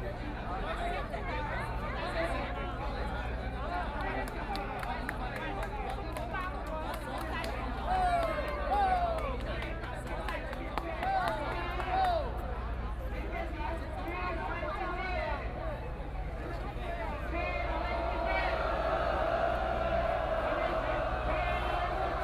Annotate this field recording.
Walking around the protest area, Confrontation, Government condone gang of illegal assembly, Who participated in the student movement to counter the cries way